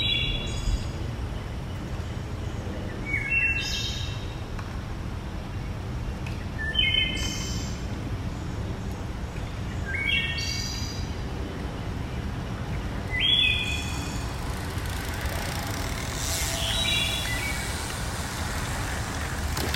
Beech Drive, Rock Creek Park Washington, DC - Birdsong in Rock Creek Park
Birdsong in Rock Creek Park on a Saturday evening after a storm